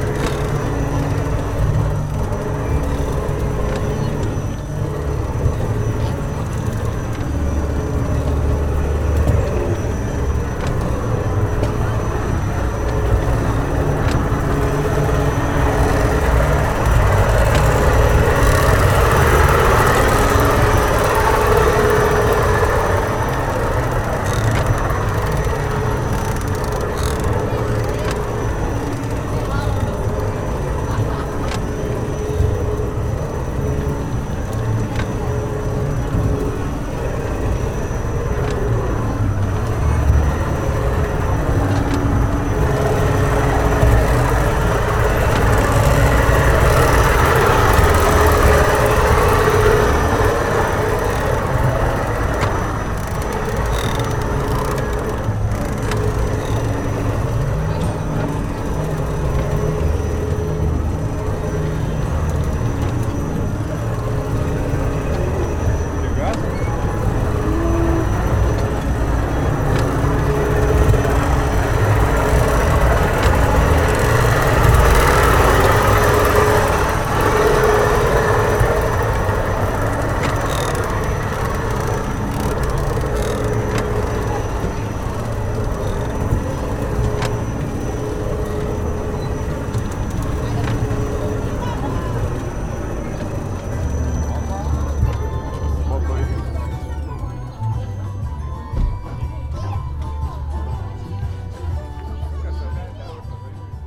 Bernardine Garden, B. Radvilaitės g., Vilnius, Lithuania - Carousel ride
A short carousel ride. People with children getting ready, taking their seats, with soft music playing in the background, and one not so happy boy complaining to his dad. Then, as carousel starts moving, loud mechanical noises drown almost everything out.
Vilniaus apskritis, Lietuva, 9 May 2021